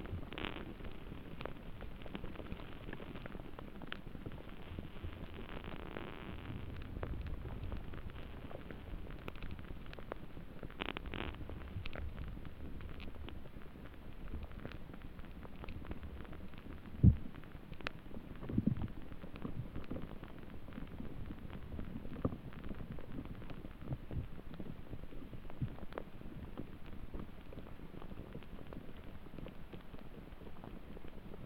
Vaikutėnai, Lithuania, melting snow
Vaikutenai mound. Little islands of last snow melting on the sun. Contact microphones buried under the snow...